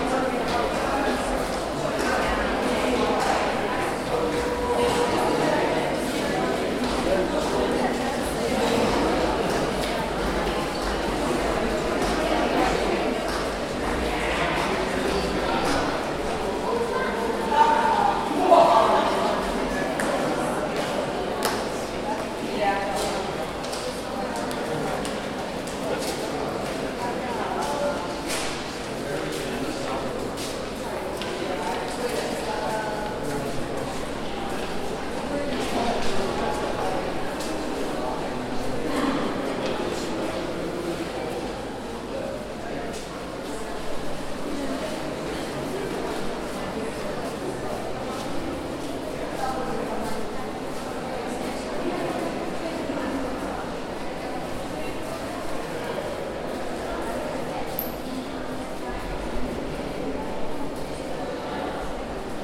Sitting on the benches on the ground floor of the stairwell to Level 3, outside 'Samson and the Philistines'.
Tascam DR-40 with internal mics, X position
London, UK